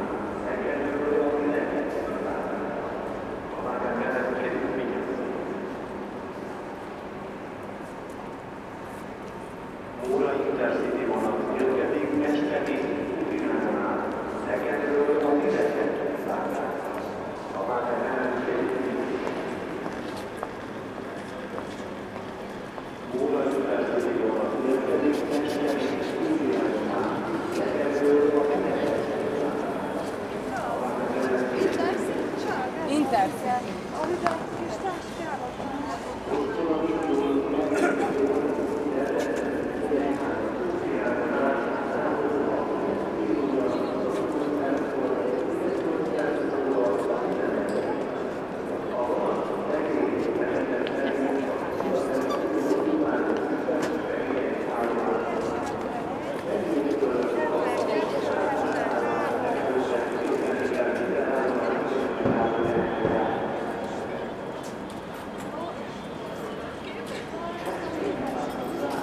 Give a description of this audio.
Stop for three days in Budapest. The hungarian language has its very own sound and intonation, none of the known. And it resounds best in the announcement speakers of a train station.